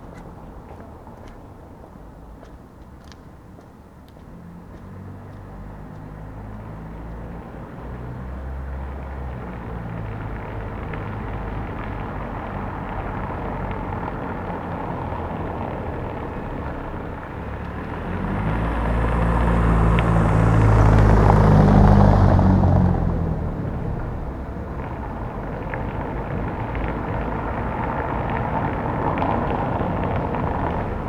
Berlin: Vermessungspunkt Friedel- / Pflügerstraße - Klangvermessung Kreuzkölln ::: 22.02.2013 ::: 02:54